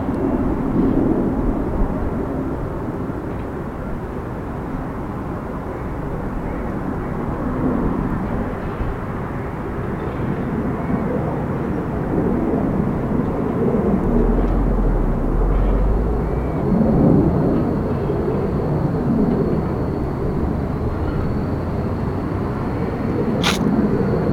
Limerick City, Co. Limerick, Ireland - Barrington's Pier
road traffic from Condell Road behind us, River Shannon ahead. From across the river industrial noise. Jet aircraft passing overhead. Some small propeller aircraft from Coonagh airfield.